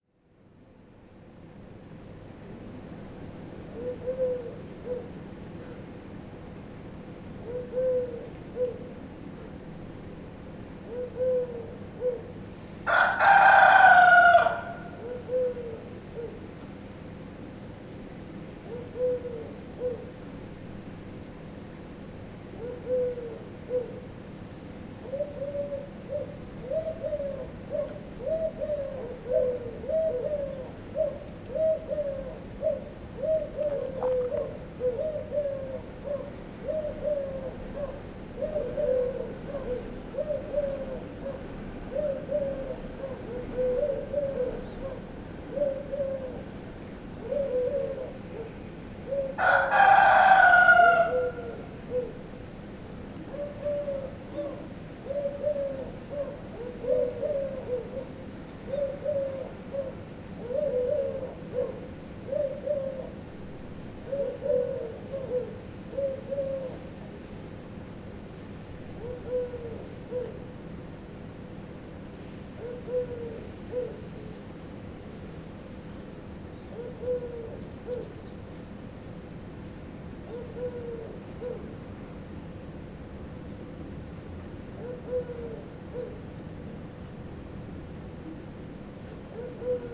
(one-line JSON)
{"title": "Corniglia, hostel - wake up call", "date": "2014-09-07 06:37:00", "description": "morning quietness in the village torn by the rooster call. pigeons hooting in the background. sooner or later the freezer units of a nearby shop had to kick in.", "latitude": "44.12", "longitude": "9.71", "altitude": "78", "timezone": "Europe/Rome"}